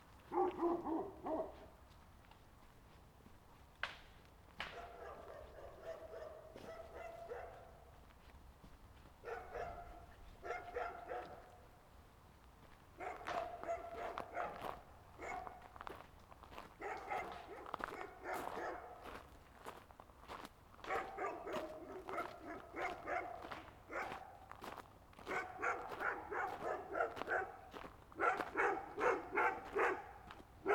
Court-St.-Étienne, Belgique - Dogs barking
Parking the car, walking a small path and atrocious dogs barking.